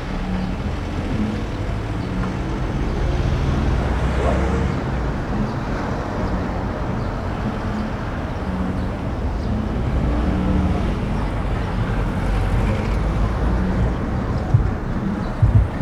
Berlin: Vermessungspunkt Friedelstraße / Maybachufer - Klangvermessung Kreuzkölln ::: 08.05.2012 ::: 16:00
Berlin, Germany, 8 May 2012, 16:00